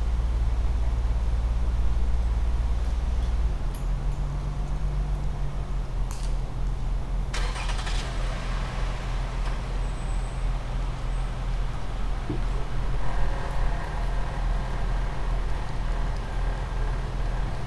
Suffex Green Ln NW, Atlanta, GA, USA - Recording at a Neighborhood Picnic Table
This recording features sounds from my street as heard from a neighborhood picnic table. The table itself is located in a woodsy area central to multiple sets of apartments. I've recorded here before, but I never quite realized how many different sounds occur in my own neighborhood. This recording was done with my new Tascam Dr-100mkiii and a dead cat wind muff.